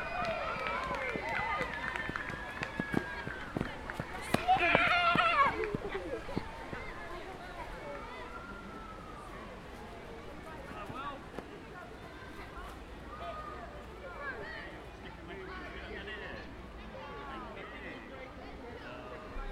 Oxford, Oxfordshire, UK - Sports Day Races, 2014 (from a distance)
Sports Day at St Barnabas' School, Oxford. Recorded from underneath trees in one of the playgrounds. Better ambience. Recorded via a Zoom H4n with a Windcat on. Sunny weather, some wind in trees can be heard. Also some of the sounds from nearby streets can be heard. The Zoom was placed on part of a climbing frame.
9 July, 14:17